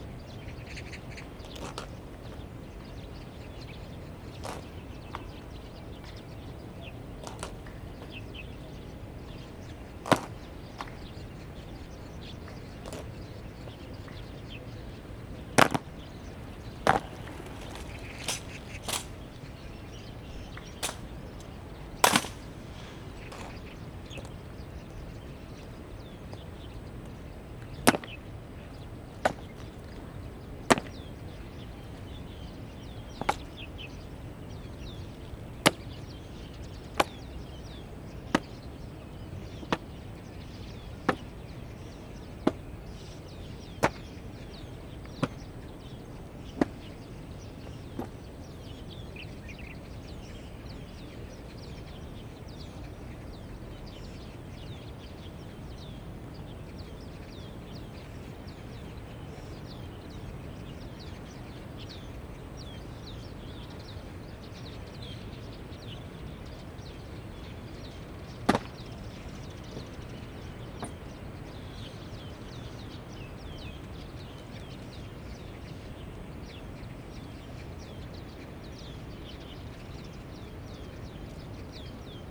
Taipei, Taiwan - Footsteps
Honor Guard, Footsteps, Sony ECM-MS907, Sony Hi-MD MZ-RH1
台北市 (Taipei City), 中華民國, 5 June 2011, ~06:00